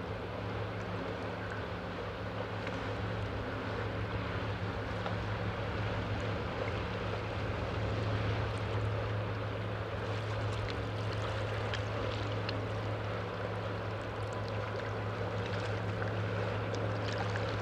Sürther Leinpfad, Köln, Germany - The humming engine of a barge passing.
Recording a barge passing by, the engine humming as it goes.
(Rode NTG-2, Sound Devices MM-1, Zoom H5)
14 June 2020, 16:00